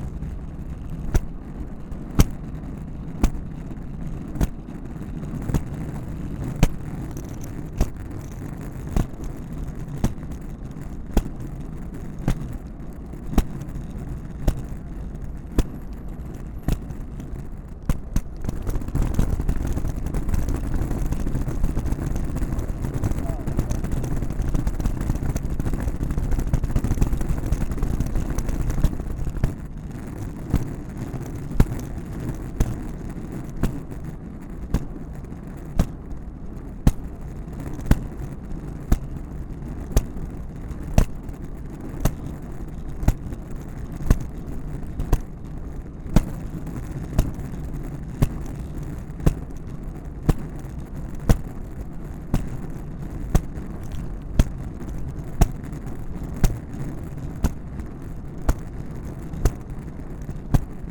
Commonwealth Avenue, Boston, MA, USA - USA Luggage Bag Drag 3
Recorded as part of the 'Put The Needle On The Record' project by Laurence Colbert in 2019.
20 September 2019, 15:54